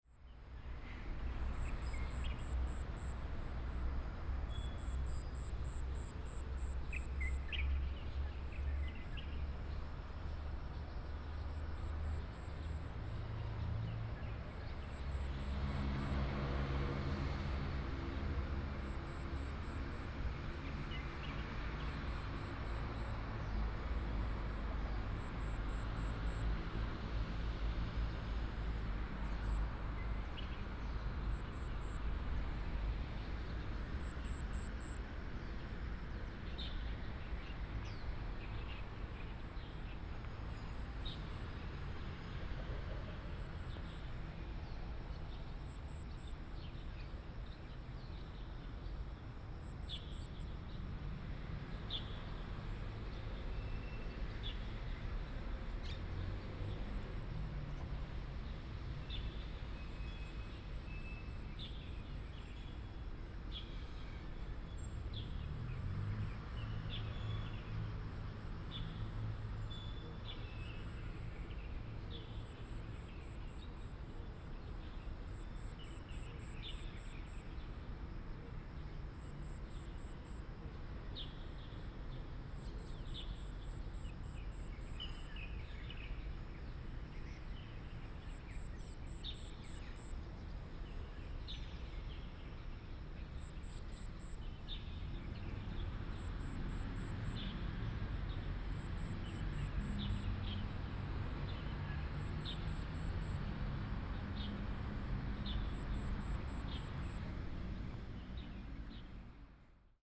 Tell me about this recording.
early morning, traffic sound, birds call, Old community after the demolition of the open space, Binaural recordings, Sony PCM D100+ Soundman OKM II